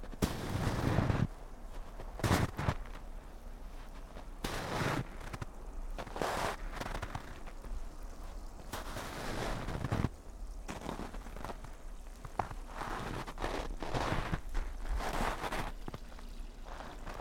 sonopoetic path, Maribor, Slovenia - just walk
snow, steps, stream
21 February, 20:44